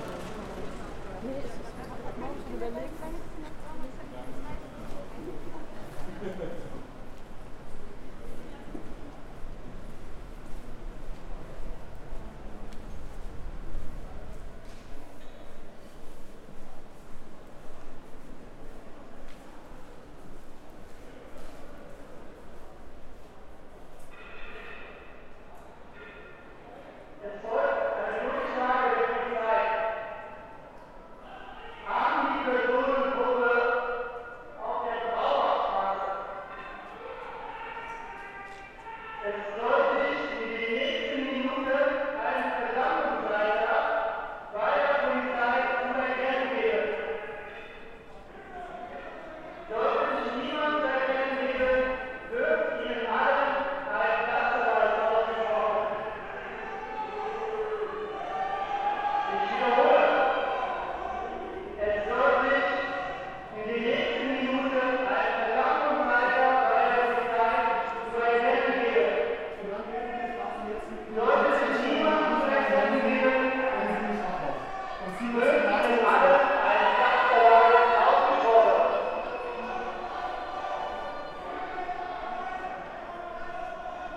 Demosntration, Paulskirche, Frankfurt am Main, Deutschland - First of May Meeting 2020 at Paulskirche
The recording starts with the statement that not the corona virus is the pandemic but capitalism. On the square were something like 200 people. At 1:10 someone with a megaphone is anouncing what the discussion with the police brought. They cannot demonstrate. They are only allowed to go with fifty, she is saying that she will not count the people. After 4 minutes she says that they can just do their speeches and then go, that would be faster. People are chatting. Some crazy old fashioned socialists at 5:40 shout slogans Who saves the world. The worker and socialism. They sound like robots: Revolution, that the world is owned by the workers. At 8:50 the police is making an anouncement that the people should not be closer than one and a half meter. Someone (without mask) is singing an old german folk song (the thoughts are free). At 10:35 he shouts 'freedom for julian assange', someone comments: who is this?